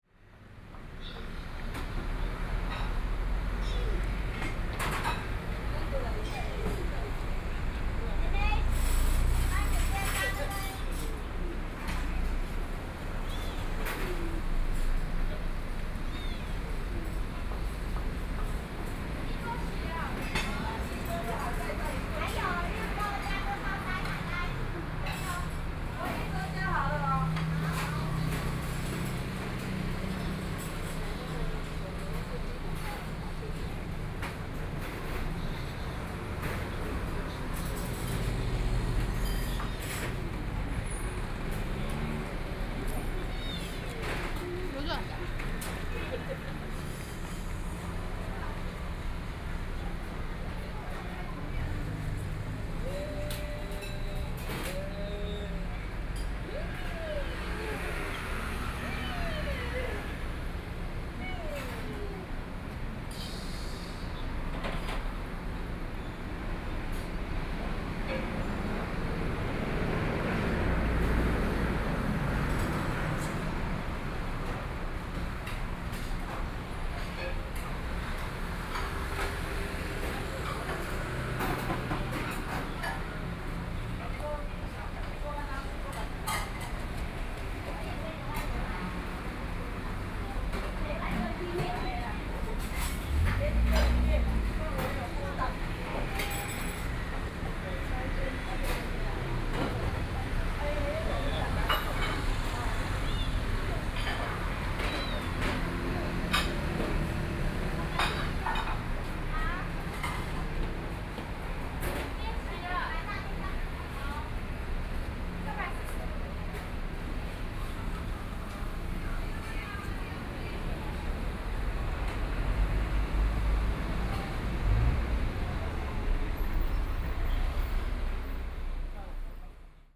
Tangwei St., Jiaoxi Township - Corner
Traffic Sound, In front of the restaurant
Sony PCM D50+ Soundman OKM II